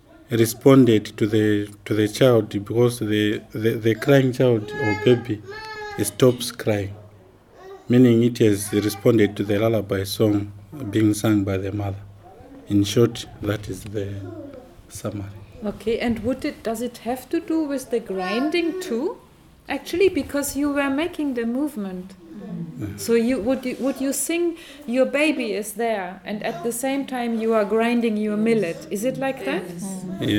Tusimpe Pastoral Centre, Binga, Zimbabwe - Antony translates for Regina...
...Antony translates and explains in English what Regina's song was about... this was another task for us at the workshop, practicing to translate or summarise in English... again, we found how important descriptions are for a listener to understand and begin to imagine what is involved here... Antony Ncube works at the Ministry for Women Affairs in Binga; Zubo's local stakeholders were also invited to our workshop...
the workshop was convened by Zubo Trust
Zubo Trust is a women’s organization bringing women together for self-empowerment.
6 July 2016